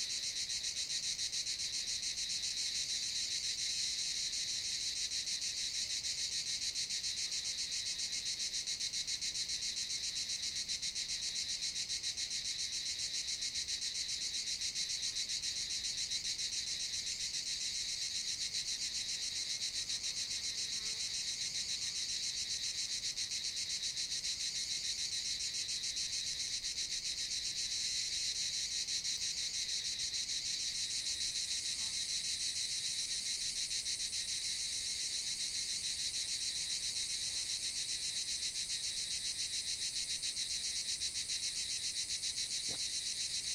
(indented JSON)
{
  "title": "Unnamed Road, Piedralaves, Ávila, España - Chicharras y Oropéndola Europea",
  "date": "2021-07-10 12:15:00",
  "description": "Grabando por la zona... escuché un ave que resultó ser Oropéndola Europea. Me pareció un sonido muy bonito y aunque había muchas chicharras... la Oropéndola se hacía oír entre el bosque.",
  "latitude": "40.30",
  "longitude": "-4.69",
  "altitude": "568",
  "timezone": "Europe/Madrid"
}